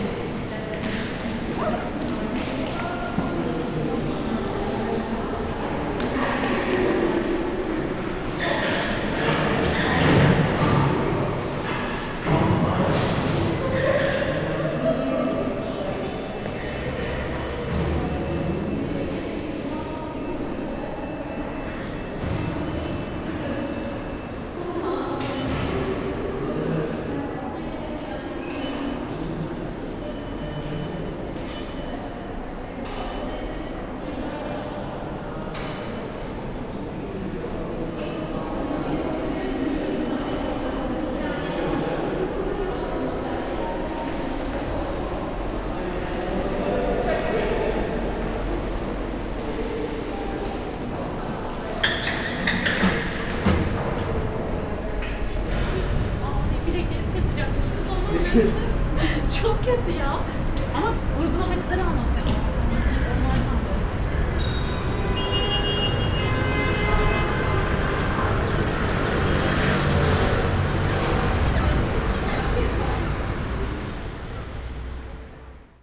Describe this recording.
great long corridor halls of the ITU architecture building